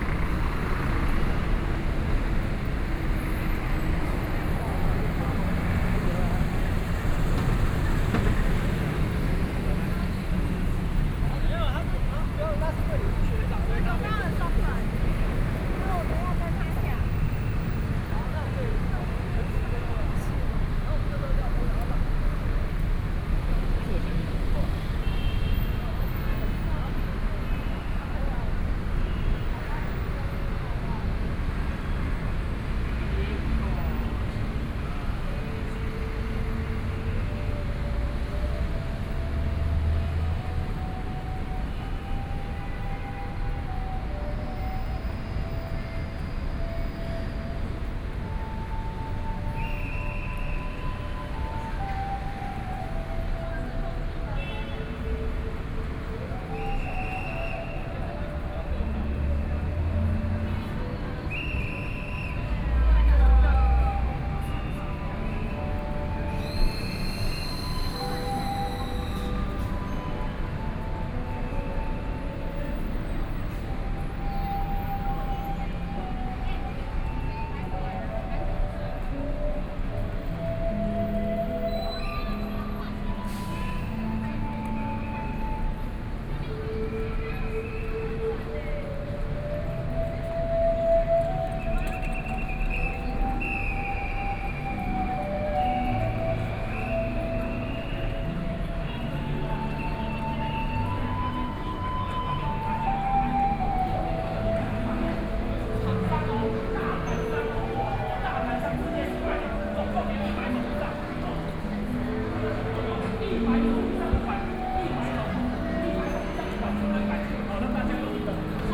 Xinyi District, Taipei - walking in the Street
Walking in the department store area, The crowd and street music, Directing traffic whistle, Sony PCM D50 + Soundman OKM II
Xinyi District, Taipei City, Taiwan